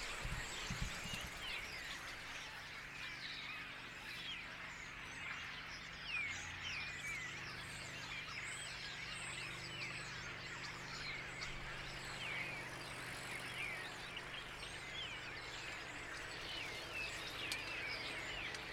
Sachsenbrücke, Pirna, Deutschland - stare in a blackberry hedge
a swarm stare in a blackberry hedge on the Elbe cycle path near Pirna.
Cyclists and inlinskater pass by, on the Elbe comes a motorboat.
Zoom H3 Recorder